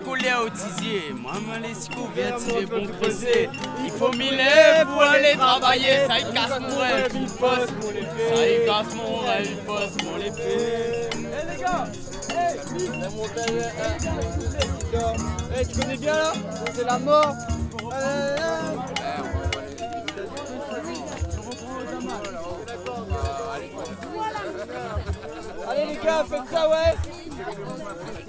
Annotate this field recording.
Field recording using stereo ZOOM H4N. People around a campfire in the early hours of the morning after "Sound système" small music festival in the town of Marla. No matter where you go in the world, people still sit around campfires and sing about weed. And play the tambourine badly, too close to the microphone.